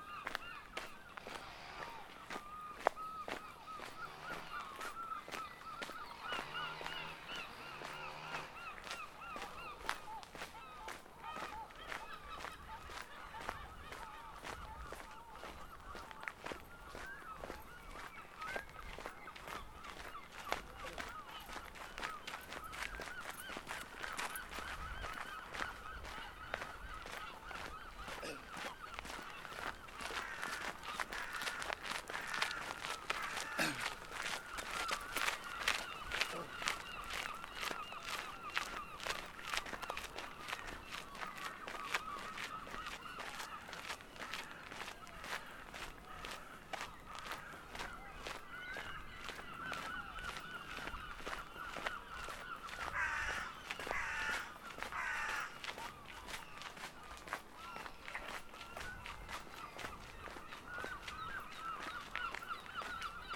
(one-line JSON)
{"title": "Riverside Walk by Hammersmith Bridge, London, UK", "date": "2016-08-18 10:53:00", "description": "Walk along the Riverside Path by the River Thames in Hammersmith / Barnes. Sounds of walking, water and other birds, walkers, human conversation, bicycles, light aircraft. Recorded on Zoom H5 with built-in stereo mics.", "latitude": "51.49", "longitude": "-0.23", "altitude": "6", "timezone": "GMT+1"}